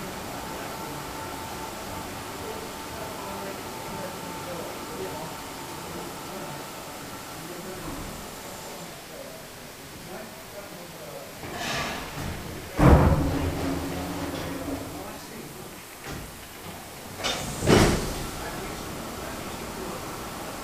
Squeaky iron gate with cascading water background